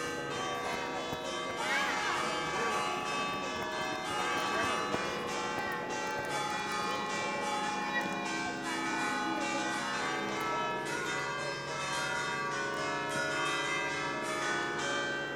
San Salvatore GE, Italie - Basilica San Salvatore dei Fieschi
On the forecourt of the church, after the service, children are running with the bells ring.
Sur le parvis de la basilique, après la messe, des enfants courent et les cloches sonnent.
30 October, ~12:00